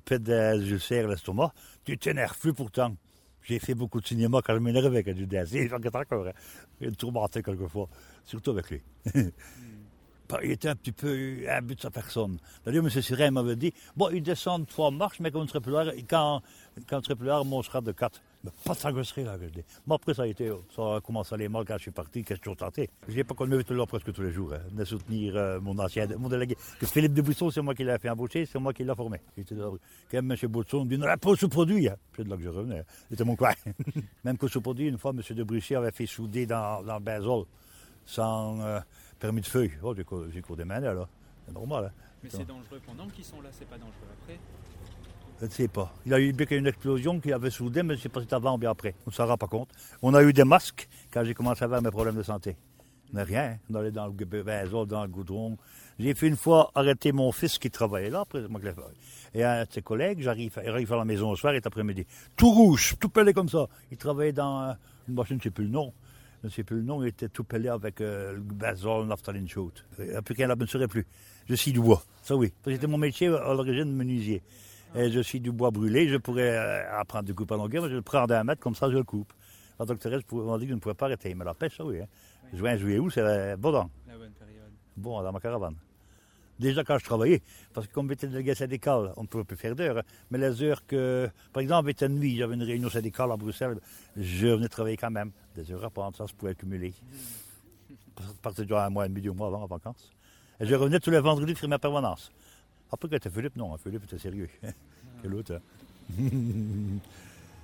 Anderlues, Belgique - The coke plant - Jacques Surin
Jacques Surin
An old worker testimony on the old furnaces of the Anderlues coke plant. We asked the workers to come back to this devastated factory, and they gave us their remembrances about the hard work in this place.
Recorded with Patrice Nizet, Geoffrey Ferroni, Nicau Elias, Carlo Di Calogero, Gilles Durvaux, Cedric De Keyser.
Anderlues, Belgium, 7 March 2009